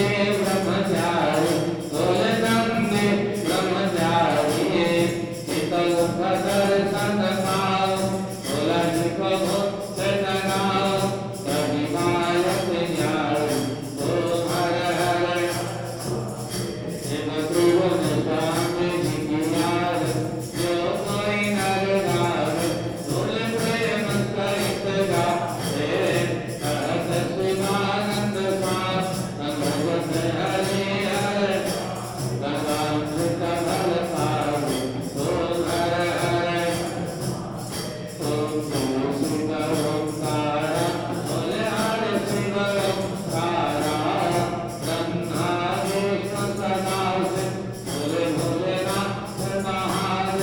Khirki, New Delhi, Delhi, India - Religious ceremony music at Sai Baba temple in Khirki
Recording of religious ceremony music at one of the near-by temples - one of the thousands in Delhi...